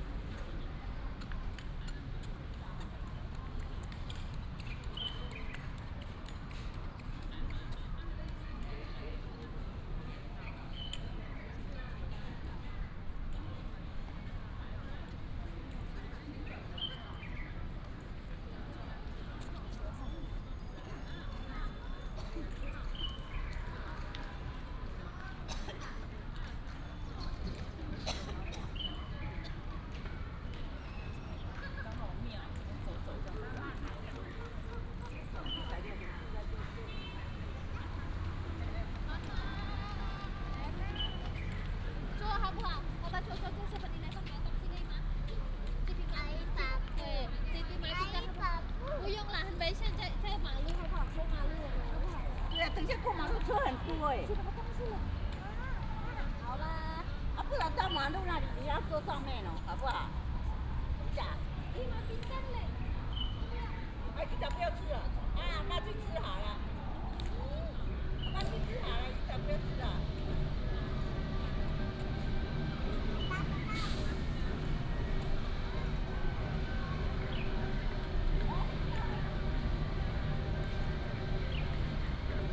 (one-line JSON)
{"title": "Taipei Confucius Temple, Taiwan - In the square", "date": "2017-04-09 17:28:00", "description": "In the square, Traffic sound, sound of birds", "latitude": "25.07", "longitude": "121.52", "altitude": "7", "timezone": "Asia/Taipei"}